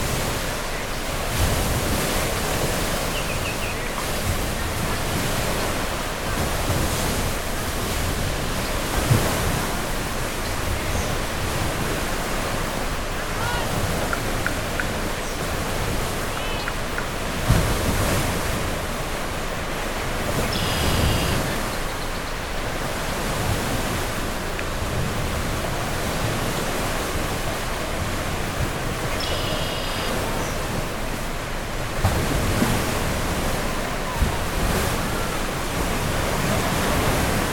Leamington, ON, Canada - Point Pelee National Park near the tip
Near the tip of the point, and including the sounds of migrating birds, for which this is a key resting spot in their journey north in the Spring.
Zoom H6 w/ MS stereo mic head.